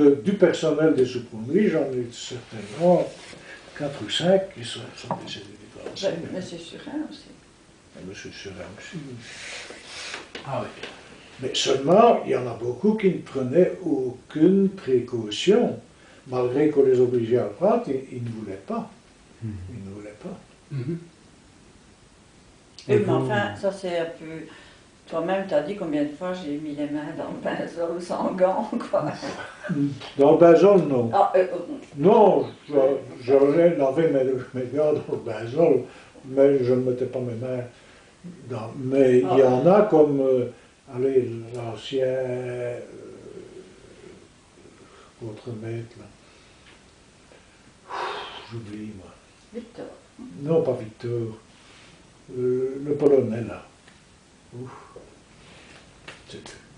Anderlues, Belgique - The coke plant - Alain Debrichy
Alain Debrichy
An old worker testimony on the old furnaces of the Anderlues coke plant. We asked the workers to come back to this devastated factory, and they gave us their remembrances about the hard work in this place.
Recorded at his home, because he was extremely tired. I placed his testimony exacly where was his work place.
Recorded with Patrice Nizet, Geoffrey Ferroni, Nicau Elias, Carlo Di Calogero, Gilles Durvaux, Cedric De Keyser.